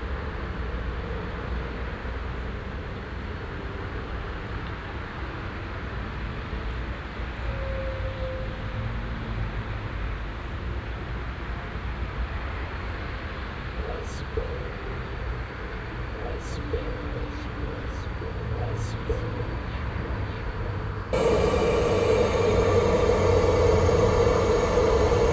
At the temporary sound park exhibition with installation works of students as part of the Fortress Hill project. Here the sound of the water fountain sculpture realized by Raul Tripon and Cipi Muntean in the second tube of the sculpture.
Soundmap Fortress Hill//: Cetatuia - topographic field recordings, sound art installations and social ambiences